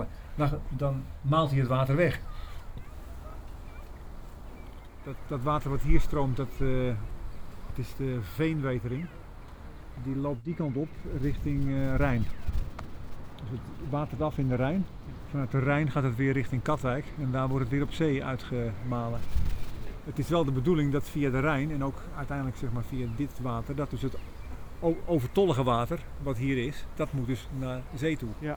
over het scheprad
molenaar Kees vertelt over het houten scheprad
Leiden, The Netherlands, 9 July, 15:45